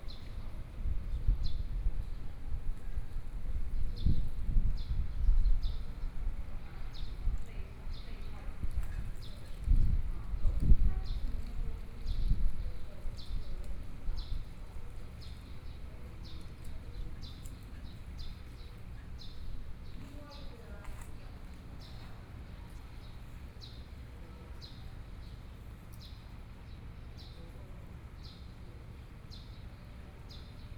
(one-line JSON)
{"title": "協天宮, 頭城鎮大坑里 - In the temple plaza", "date": "2014-07-29 12:48:00", "description": "In the temple plaza, Traffic Sound, Birdsong, Small village, Hot weather", "latitude": "24.86", "longitude": "121.83", "altitude": "7", "timezone": "Asia/Taipei"}